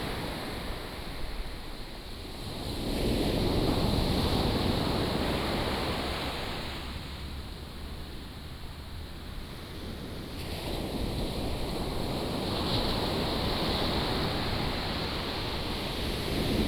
Taitung County, Taiwan - Thunder and waves
Thunder and waves, Sound of the waves
8 September, 14:18